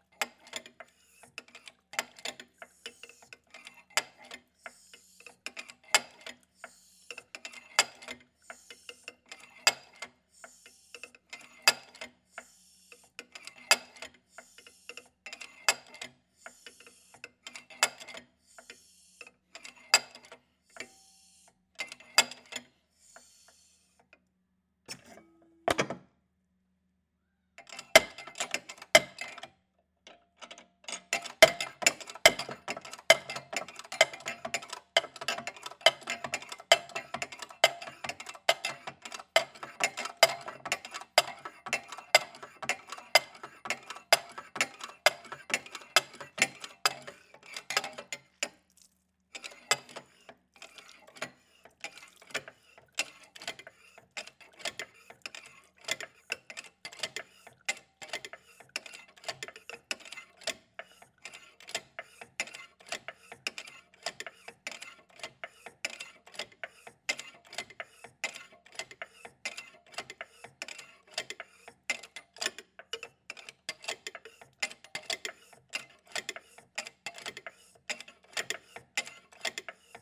Esquerdes (Pas-de-Calais)
Maison du papier
La presse manuelle